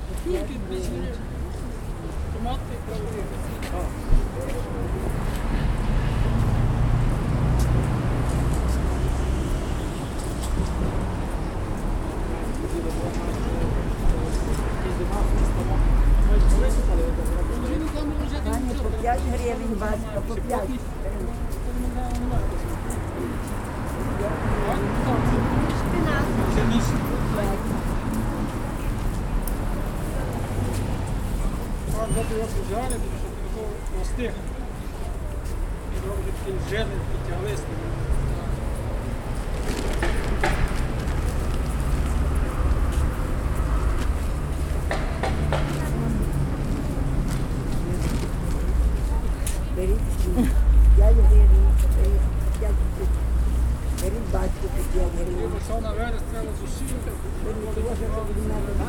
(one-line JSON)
{"title": "Lychakivs'kyi district, Lviv, Lviv Oblast, Ukraine - Vinnikivskiy Market", "date": "2015-04-04 09:15:00", "description": "Among vendors at the sidewalk in front of the market, selling home-grown and -made produce. Binaural recording.", "latitude": "49.84", "longitude": "24.05", "altitude": "312", "timezone": "Europe/Kiev"}